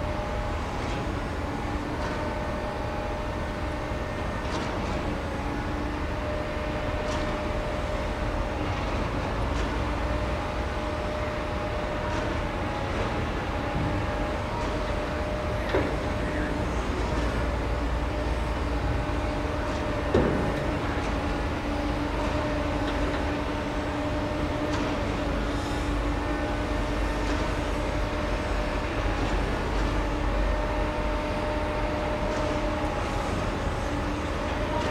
Śródmieście, Gdańsk, Polska - Marina to be
Construction site of a new yacht pier.
Gdańsk, Poland, 22 May 2014, 21:25